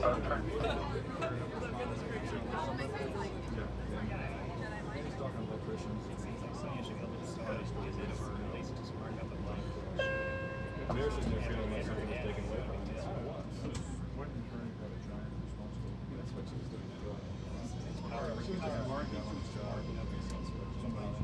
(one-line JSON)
{"title": "West Oakland Bart station /subway/ - ride through a tube towards San Francisco", "date": "2010-11-20 01:57:00", "description": "approaching West Oakland Bart /subway/ station and a ride through a tube under the SF Bay towards The San Francisco", "latitude": "37.81", "longitude": "-122.30", "altitude": "4", "timezone": "US/Pacific"}